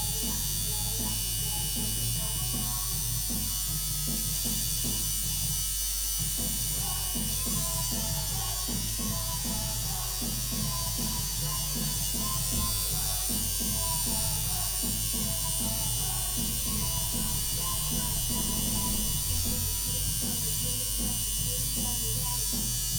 Prospect Rd, Scarborough, UK - having a tattoo ...
having a tattoo ... tough love tattoo studio ... preliminaries ... tattooing ... discussion about after care ... dpa 4060s clipped to bag to zoom h5 ... tattoo of midway atoll with a laysan albatross in full sky moo mode ... and two birds silhouette in flight ... and music ...